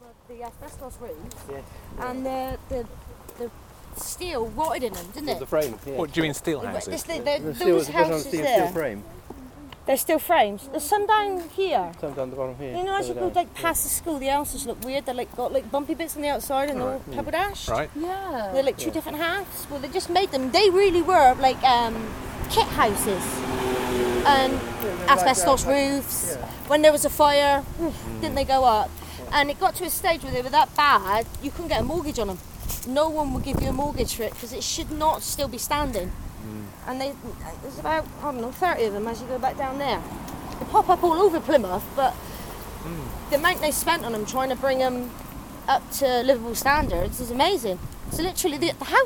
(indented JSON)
{
  "title": "Walk Three: Houses with metal frames",
  "date": "2010-10-04 16:44:00",
  "latitude": "50.39",
  "longitude": "-4.10",
  "altitude": "72",
  "timezone": "Europe/London"
}